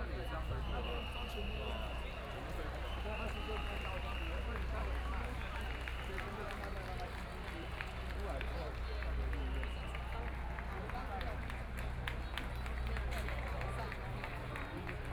Executive Yuan, Taipei City - occupied the Executive Yuan
Student activism, Walking through the site in protest, People and students occupied the Executive Yuan
The police are strongly expelled student